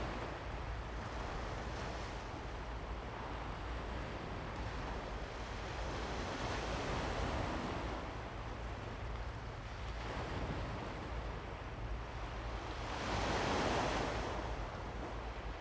上楓港, 縱貫公路 Fangshan Township - Early morning at the seaside
On the coast, Sound of the waves, Traffic sound, Early morning at the seaside
Zoom H2n MS+XY
Pingtung County, Taiwan, 28 March 2018, 04:46